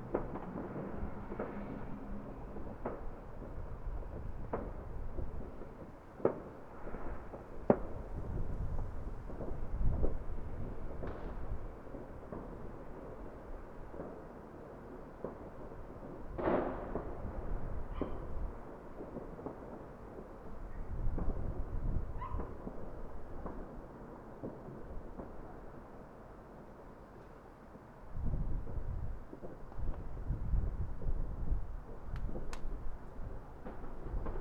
Poznan, Piatkowo district, ul. Mateckiego, balcony north end - new year's eve midway
quiet streets, no traffic, everybody inside, subtle wind, particles at rest. pure, distant fireworks blasts echoing in the freezing air.
31 December, ~22:00